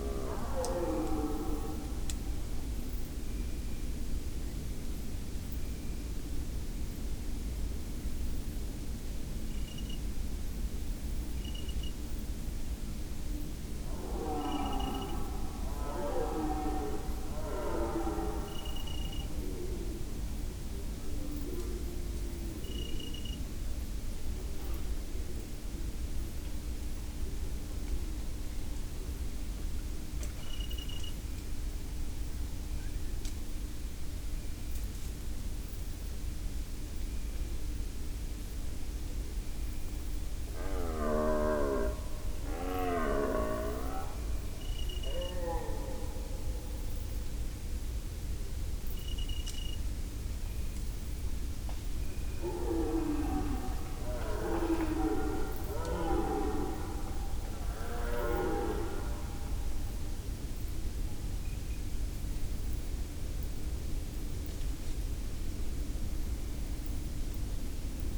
Opatje selo - Lokvica, 5291 Miren, Slovenia - Elk rut
Elk rutting. Lom Uši Pro, AB stereo array 50cm apart.
Slovenija, 4 September 2020, 15:31